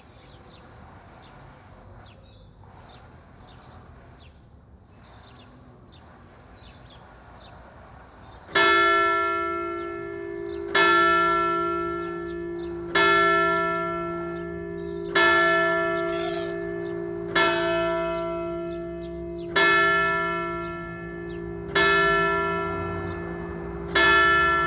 Bells, cars, bikes, birds.
Cloches, voitures, oiseaux et vélos.
Tech Note : Sony PCM-M10 internal microphones.
France métropolitaine, France